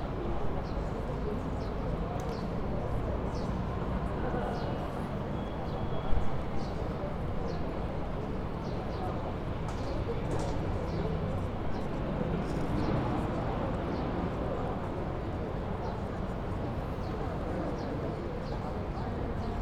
plaza de reina sofia, madrid
plaza al frente del museo de reina sofia, madrid